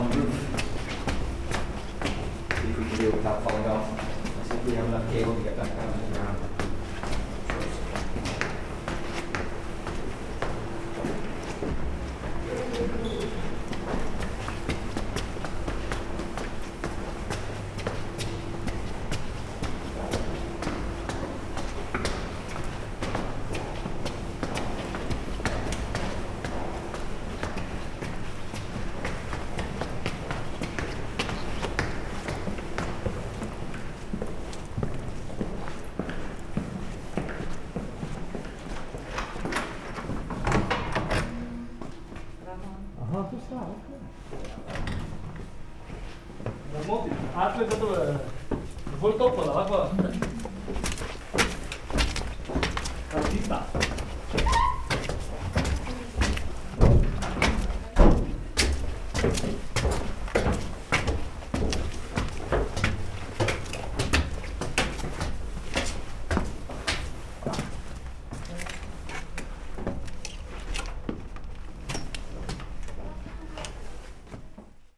Maribor, Kibla
on the way to the roof, in order to mount an antenna for a temporary pirate radio station during kiblix festival
Maribor, Slovenia, 18 November 2011